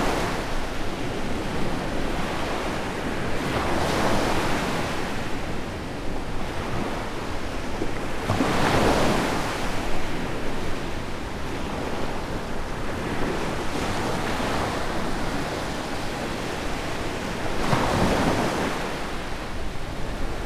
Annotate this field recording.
A night recording on a beach in Mamaia, Romania. Being a popular destination for tourists, beaches in Mamaia are usually quite crowded and consequently the bars play music at all times. It is difficult to find a spot where you can just listen to the sea. There are some sweet spots in between terraces, but even there the bass travels and is present. This is the rumble that you hear in the lows, it is of a musical origin and not microphone issues. With EQ it can obviously be cleaned but this creates an impression of what could be and not what it actually is. Recorded on a Zoom F8 using a Superlux S502 ORTF Stereo Mic.